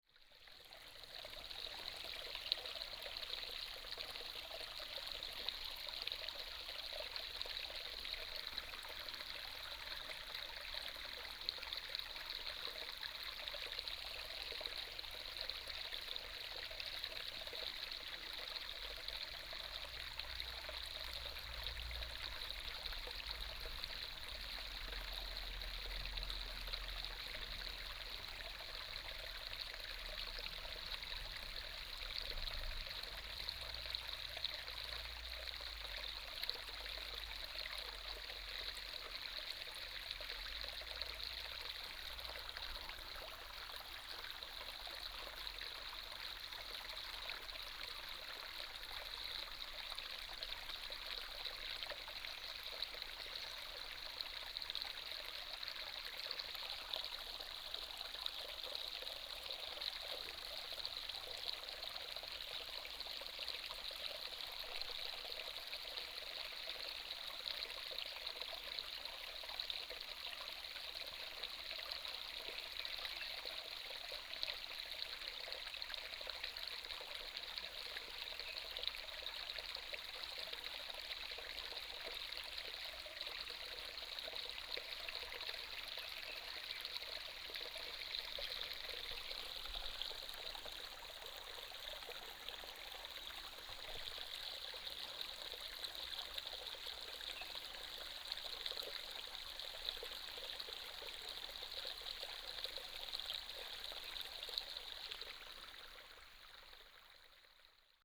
中路坑溪溼地, Puli Township - water streams
The sound of water streams, Bird calls